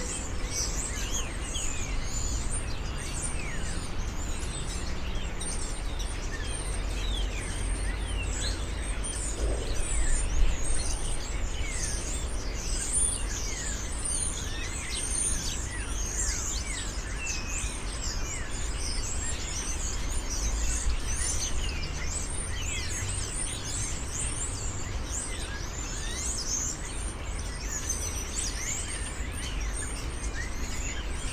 Thamesmead, UK - Birds of Southmere Park Way
Recorded with a stereo pair of DPA 4060s and a Marantz PMD661.